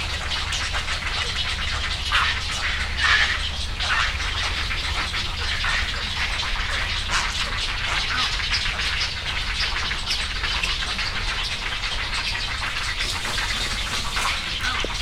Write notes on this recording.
Great egrets, cattle egrets, and other birds in the woods beside Hyozu Shrine in Yasu City, Japan. Recorded with a Sony PCM-M10 recorder and FEL Communications Clippy Stereo EM172 Microphone tied to a tree.